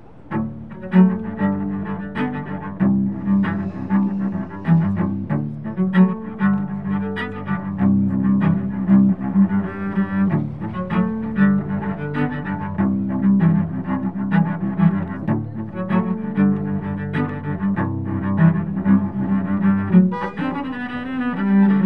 Place du Capitole, Toulouse, France - Cellist Play
Cellist Play Wirth background Sound
Worker Cleaning Facade Building, car trafic
captation : zoom h4n